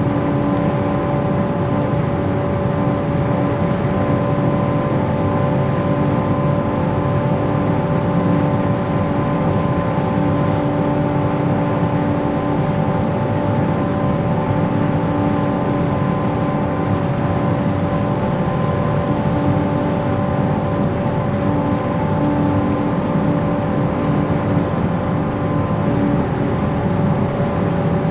Oostende, Belgique - Shuttle boat
The Oostende harbor is quite big. In aim to help the pedestrian to go on the other side of the city, called Vismijn (literally it means the fish mine), there's a shuttle boat. Recording of the boat on a winter foggy morning, crossing the harbor.